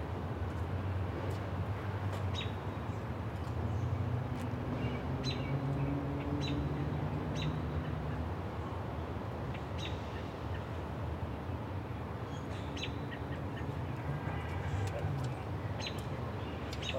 Grandview Ave, Ridgewood, NY, USA - Birds and Squirrels
Sounds of birds and a squirrel hopping around the microphone hiding nuts.
Recorded at Grover Cleveland Playground in Ridgewood, Queens.
United States, 17 March 2022, 15:05